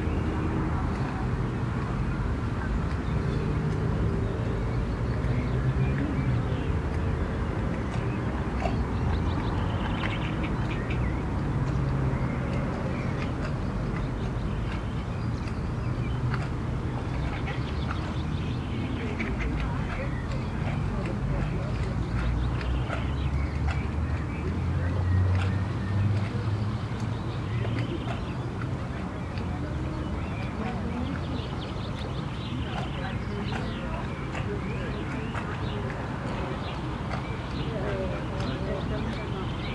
Bredeney, Essen, Deutschland - essen, baldeney lake, hügel, landing stage
An der Anlegestation Hügel der Weissen Flotte. Der Klang eines Flugzeugüberflugs über den see, dann die Ankunft eines Boots, Passagiere, die das Boot besteigen und die Abfahrt des Bootes.
At the landing station Hügel of the white fleet. The sound of a plane crossing the lake, the arrival of a boat, passengers talking and entering the boat and the departure of the boat.
Projekt - Stadtklang//: Hörorte - topographic field recordings and social ambiences
Essen, Germany, 29 April 2014